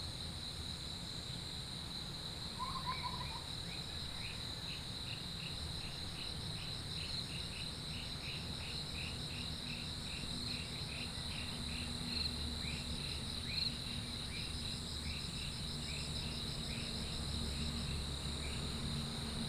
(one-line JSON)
{"title": "水上巷, 桃米里, Nantou County - At the corner of the road", "date": "2016-06-08 05:25:00", "description": "early morning, Next to the river, Insects sounds, Chicken sounds", "latitude": "23.94", "longitude": "120.92", "altitude": "476", "timezone": "Asia/Taipei"}